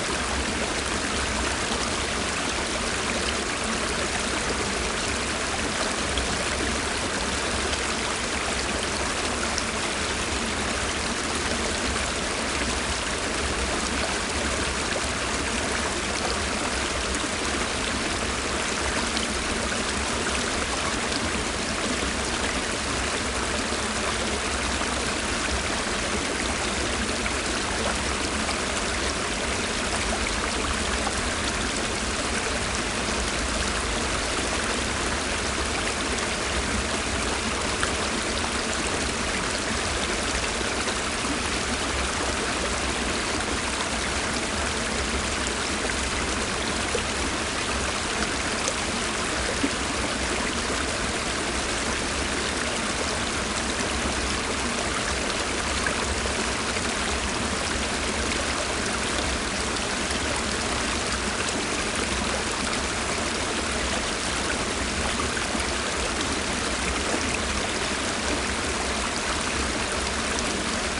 Newry and Mourne, UK - Kilbroney Stream 1
Recorded with a pair of DPA 4060s and a Marantz PMD 661
20 February 2016, ~14:00